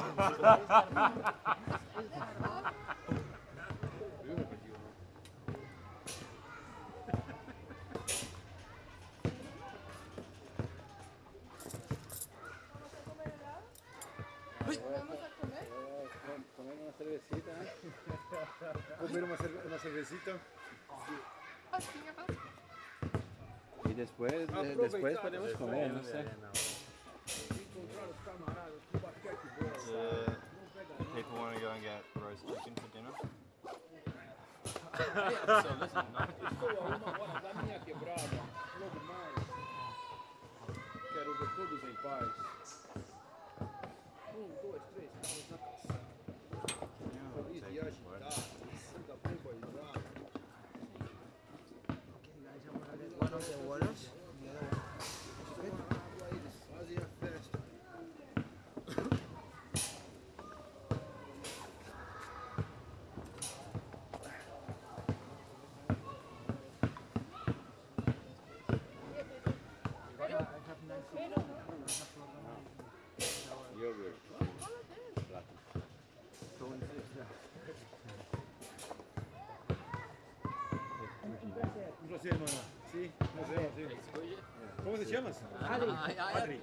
Pflügerstraße, Berlin, Germany - Basketball Court and Childrens Playground
Recorded with a Zoom H5. Placed on the side of the court with the Basketball hoops on the left and right.
Children's playground is on the other side of the court.
August 2018